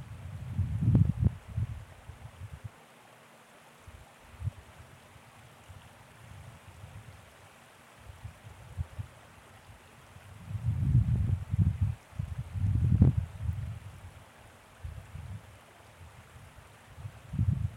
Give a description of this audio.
Winter recording from one of the many bridges spanning Taylor-Massey Creek. Apologies for the considerable wind noise further on; for some reason I totally forgot to put the foamie on the recorder!